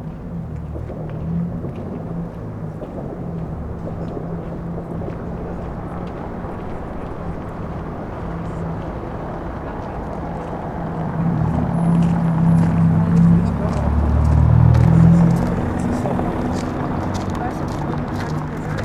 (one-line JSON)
{"title": "Berlin: Vermessungspunkt Friedel- / Pflügerstraße - Klangvermessung Kreuzkölln ::: 28.12.2012 ::: 17:10", "date": "2012-12-28 17:10:00", "latitude": "52.49", "longitude": "13.43", "altitude": "40", "timezone": "Europe/Berlin"}